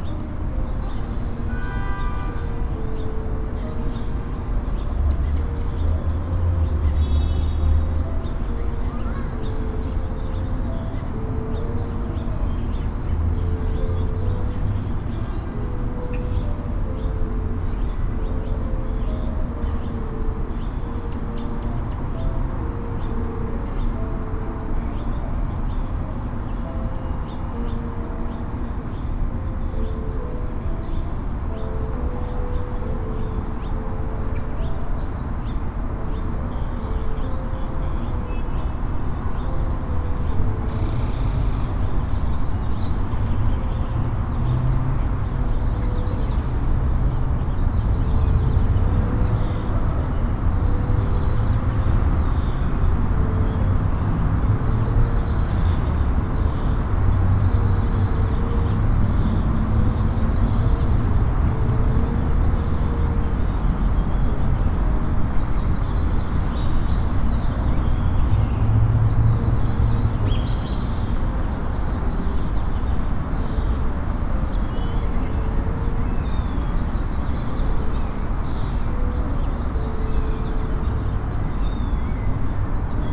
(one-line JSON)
{"title": "C. Calz. de los Heroes, La Martinica, León, Gto., Mexico - Forum Cultural Guanajuato, Calzada de las Artes.", "date": "2022-06-03 13:06:00", "description": "Forum Cultural Guanajuato, Calzada de las Artes.\nEveryday environmental sound in the Calzada del Forum Cultural Guanajuato where you can distinguish the sound of birds, people passing by, background music from the speakers of the place, and some vehicles on the street.\nI made this recording on june 3rd, 2022, at 1:06 p.m.\nI used a Tascam DR-05X with its built-in microphones and a Tascam WS-11 windshield.\nOriginal Recording:\nType: Stereo\nSonido ambiental cotidiano en la Calzada del Forum Cultural Guanajuato donde se alcanza a distinguir el sonido de los pájaros, gente que va pasando, música de fondo de las bocinas del lugar y algunos vehículos en la calle.\nEsta grabación la hice el 3 de junio de 2022 a las 13:06 horas.", "latitude": "21.12", "longitude": "-101.66", "altitude": "1799", "timezone": "America/Mexico_City"}